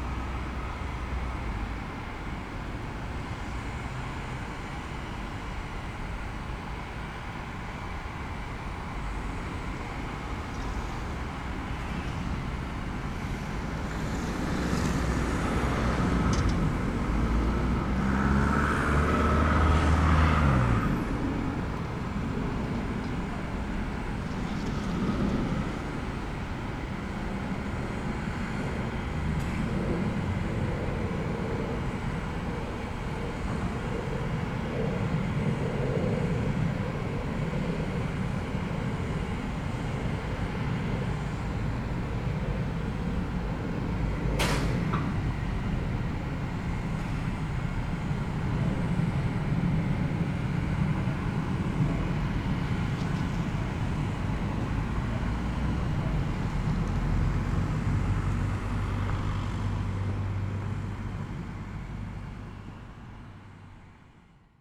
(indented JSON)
{
  "title": "berlin: weigandufer - A100 - bauabschnitt 16 / federal motorway 100 - construction section 16: combined heat and power plant",
  "date": "2014-01-22 17:35:00",
  "description": "steam exhaust of the combined heat and power plant\nthe motorway will pass about 350 m east of this place\nthe federal motorway 100 connects now the districts berlin mitte, charlottenburg-wilmersdorf, tempelhof-schöneberg and neukölln. the new section 16 shall link interchange neukölln with treptow and later with friedrichshain (section 17). the widening began in 2013 (originally planned for 2011) and shall be finished in 2017.\nsonic exploration of areas affected by the planned federal motorway a100, berlin.\njanuary 22, 2014",
  "latitude": "52.48",
  "longitude": "13.45",
  "timezone": "Europe/Berlin"
}